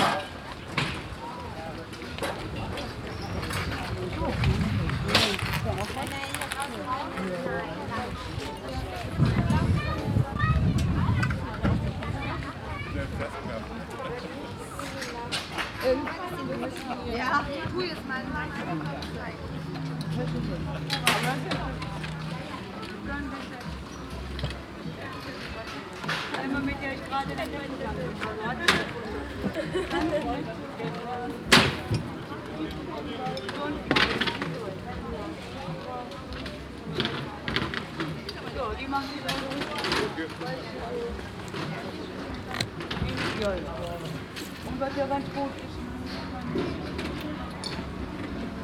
On the main street of the village during the annual city summer flee market in the early evening. The streets are closed for the traffic. The sounds of people packing their stands.
soundmap nrw - social ambiences and topographic field recordings
9 September 2012, ~18:00